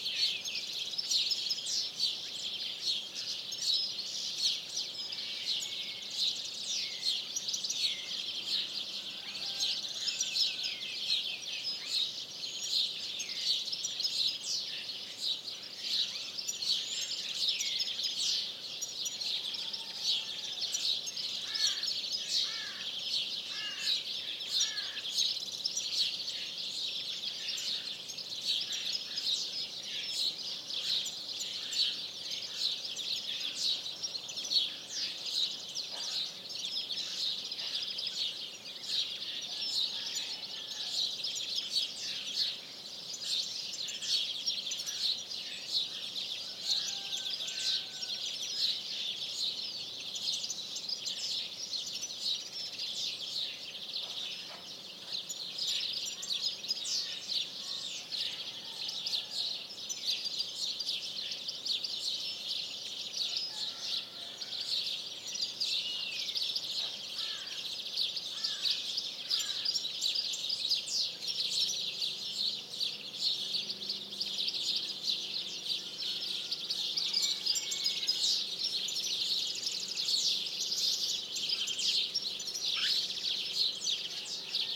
Urbanização Vila de Alva, Cantanhede, Portugal - Dawn Chorus, Cantanhede
Dawn chorus in Cantanhede, Portugal.
Coimbra, Portugal